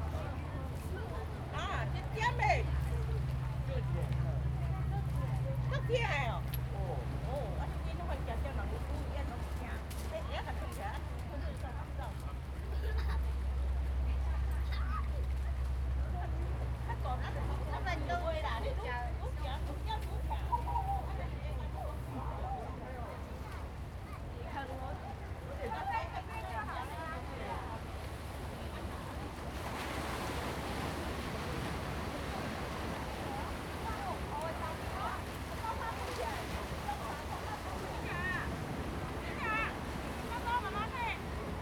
花瓶岩, Hsiao Liouciou Island - In the small coastal
In the small coastal, Sound of the waves, Tourists, Cruise whistle
Zoom H2n MS +XY
1 November 2014, 09:39